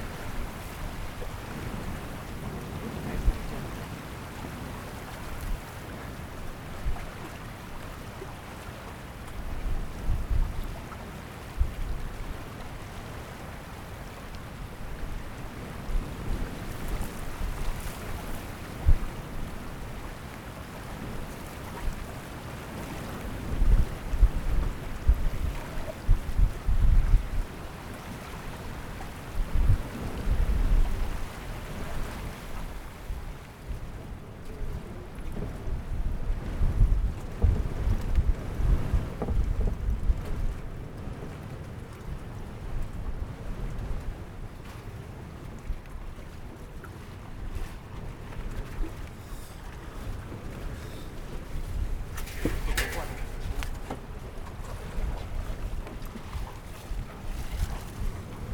Changhua, Taiwan - waves
Strong winds, Sound waves, Zoom H6 MS
Changhua County, Fangyuan Township, 永興海埔地海堤, 9 March 2014